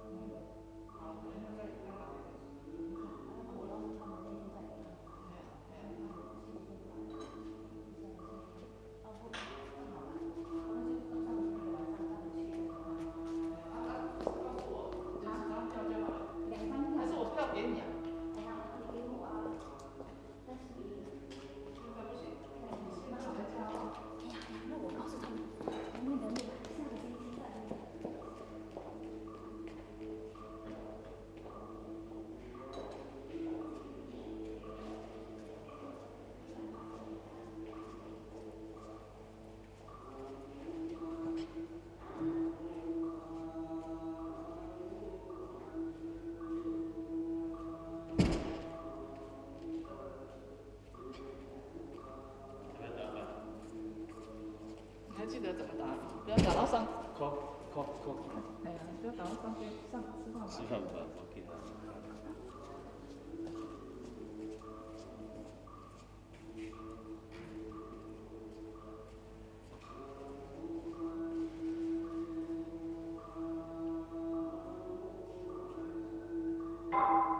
Ackerstraße, Wedding, Berlin, Deutschland - Ackerstraße, Berlin - Waiting for the mass in Buddhist temple Fo-guang-shan
Ackerstraße, Berlin - Waiting for the mass in Buddhist temple Fo-guang-shan.
[I used an MD recorder with binaural microphones Soundman OKM II AVPOP A3]